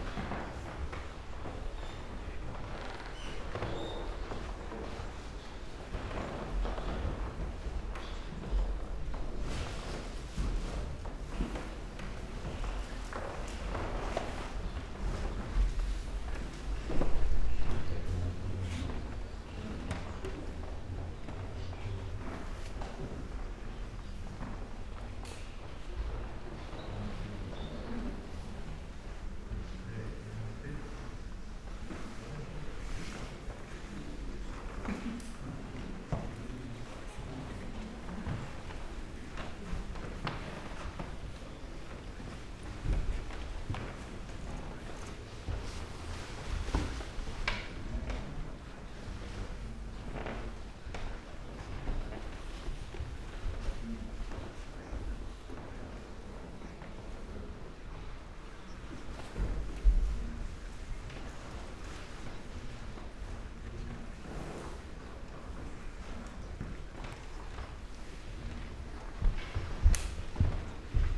Sanssouci, Potsdam, Germany - walk
slow walk through rooms with different kind of wooden floors and parquet, aroundgoers and their steps, whisperings, plastic raincoats and plastic bags for umbrellas
Brandenburg-Südwest-Südost, Brandenburg, Deutschland